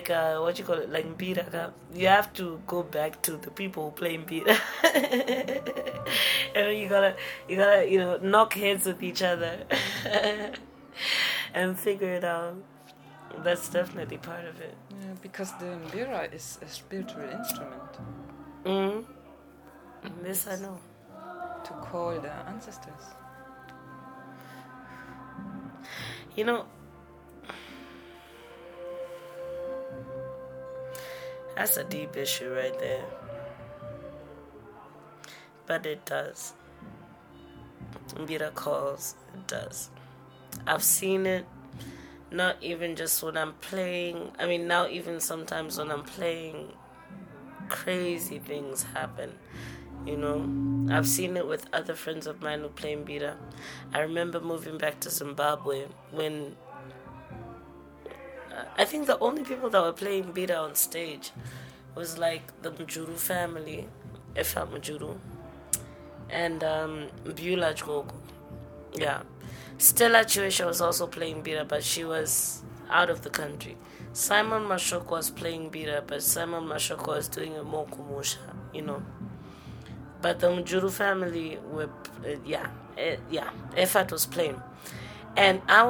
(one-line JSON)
{"title": "Book Cafe, Harare, Zimbabwe - Chiwoniso Maraire “She’d mbira…”", "date": "2012-08-25 17:50:00", "description": "The recording was made at Book Café in the small accountant’s office at the rear on 25 August 2012. Evening’s performances had started and you can hear the sounds during our entire conversation, in fact the music begins to filters into the rhythm of the conversation. In this interview you can hear Chiwoniso “at home”, mbira-sounds in the background at the place where she loves to hang out and perform…. Here she relates her “spiritual connection” to the mbira…\nChiwoniso Maraire was an accomplished Zimbabwe singer, songwriter and mbira artist from a family of musicians and music-scholars; she died 24 July 2013.", "latitude": "-17.83", "longitude": "31.06", "altitude": "1489", "timezone": "Africa/Harare"}